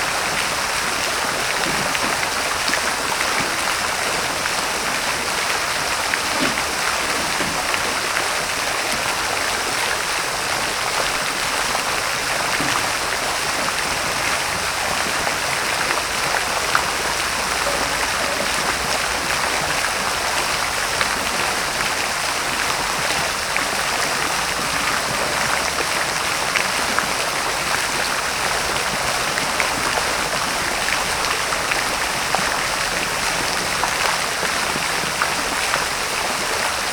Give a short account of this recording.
Fontanna Museum Techniki w Palac Kultury i Nauki, Warszawa